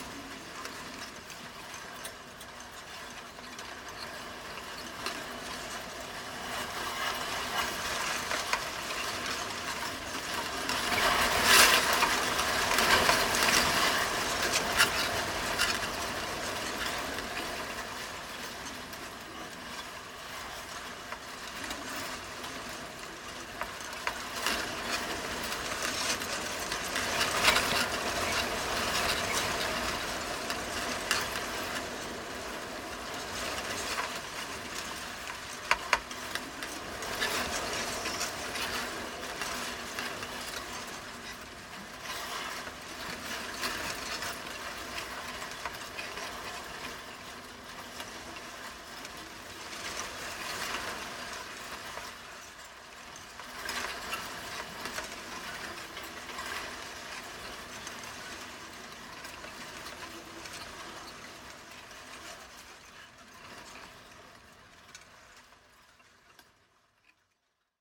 metal fence at Avebury stone circle crossing
contact mics attached to a wire fence in Avebury catching wind and grass sounds.